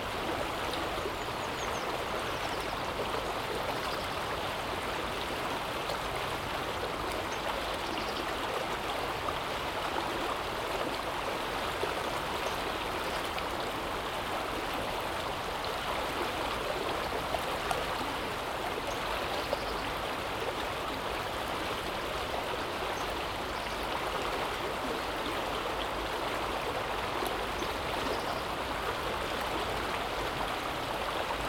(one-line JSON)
{"title": "Corona-Schröter-Weg, Weimar, Deutschland - Geophony: Park an der Ilm, Weimar.", "date": "2021-05-07 15:10:00", "description": "An example of Geophony: All sounds of the earth for example, winds blowing, and waves crushing.\nDate: 07.05.2021.\nTime: Between 3 and 5 PM.\nRecording Format: Binaural.\nRecording Gear: Soundman OKM into ZOOM F4.\nWe also have a focus in Multimedia Installations and Education.", "latitude": "50.98", "longitude": "11.34", "altitude": "214", "timezone": "Europe/Berlin"}